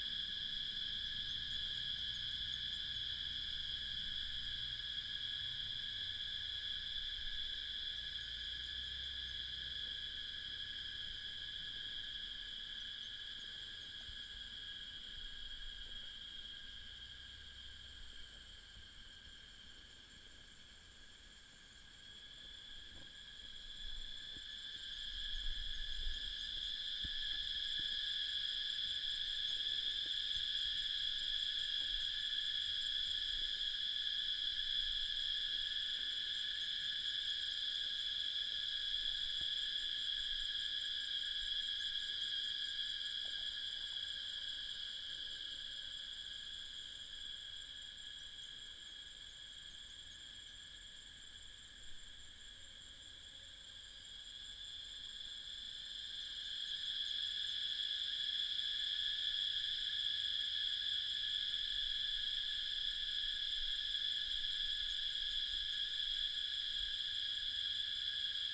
油茶園, 五城村 Yuchih Township - Cicada sounds
Cicada sounds
Binaural recordings
Sony PCM D100+ Soundman OKM II
Nantou County, Yuchi Township, 華龍巷43號, 5 May